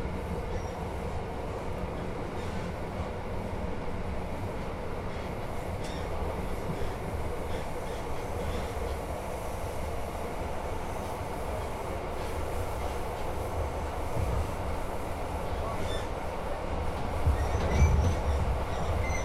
Recording of a train ride made from the inside with the recorded placed directly on the train floor.
Recorded with UNI mics of Tascam DR100mk3
województwo śląskie, Polska, June 2021